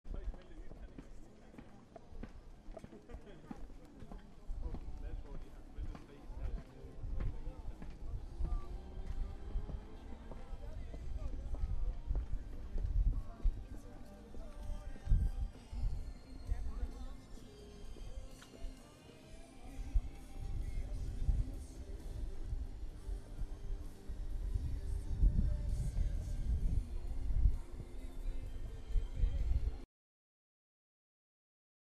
Åen, Århus
Close to Åen and a clothing store.
Aarhus, Denmark